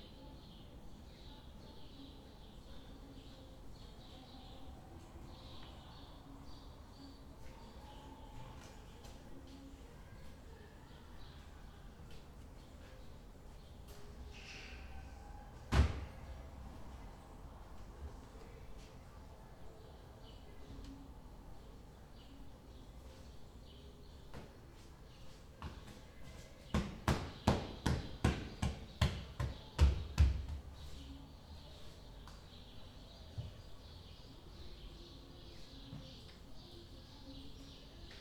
Berlin Bürknerstr., backyard window - carpet cleaning
my neighbour is cleaning his carpet after a party
Berlin, Germany, 13 June 2010, ~16:00